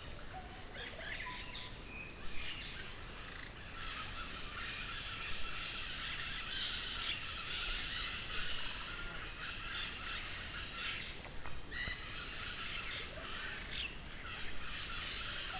Reserva Nacional Tambopata, Peru - Rainforest atmospere
Rainforest atmosphere recorded in Tambopata National Reserve, Perú.